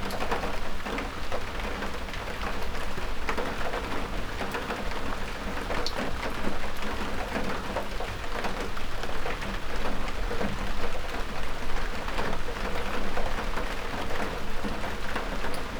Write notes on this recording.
Early on the morning of the longest day it rained after a long dry spell. MixPre 6 II with 2 x Sennheiser MKH 8020s.